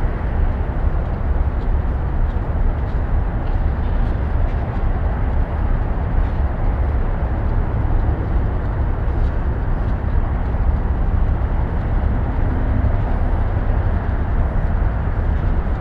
neoscenes: engines and Interstate 70
Denver, CO, USA, 28 October 2011